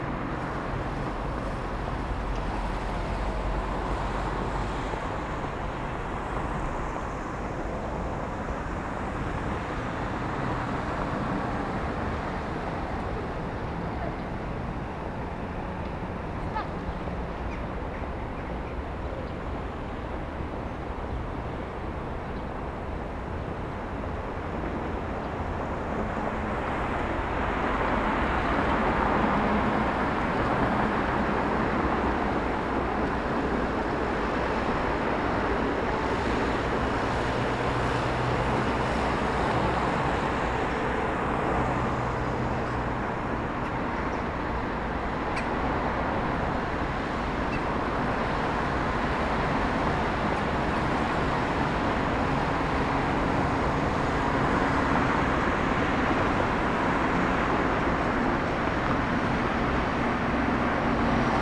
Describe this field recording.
Recorded during audio art workshops "Ucho Miasto" ("Ear City"):